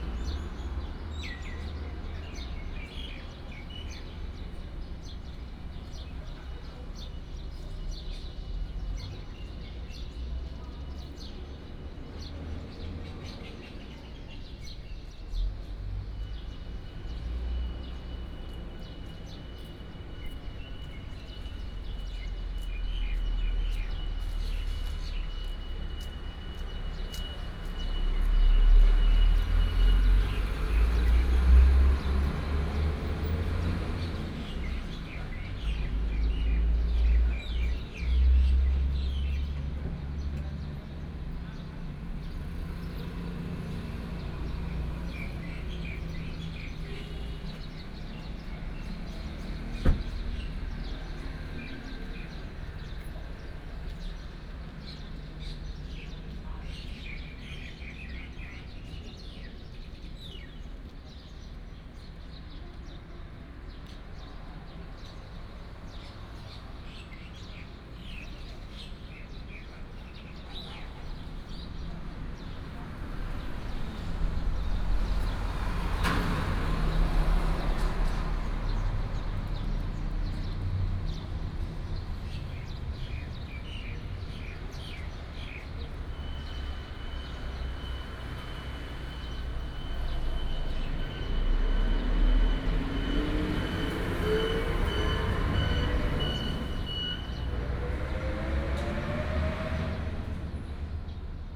四湖參天宮, Sihu Township, Yunlin County - Square in front of the temple
Square in front of the temple, traffic Sound, Bird sound